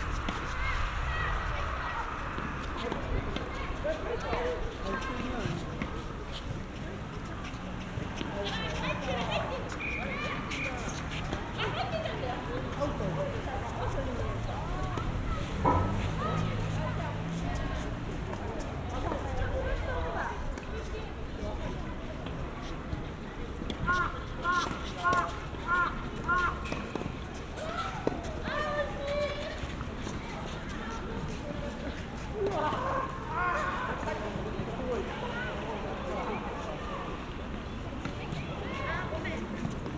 Waniminamihama, Ōtsu-shi, Shiga-ken, Japan - 201812241051 Wani Public Tennis Court and Athletic Ground
Title: 201812241051 Wani Public Tennis Court and Athletic Ground
Date: 201812241051
Recorder: Zoom F1
Microphone: Roland CS-10EM
Location: Wani, Otsu, Shiga, Japan
GPS: 35.159310, 135.923385
Content: binaural tennis japan japanese people traffic wind sports children adults talking crow
24 December 2018